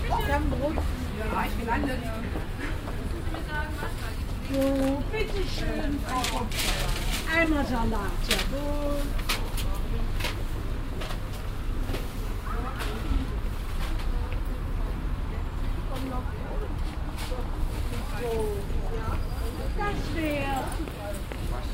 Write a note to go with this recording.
a small weekly market recorded in the morning time, project: :resonanzen - neanderland soundmap nrw: social ambiences/ listen to the people - in & outdoor nearfield recordings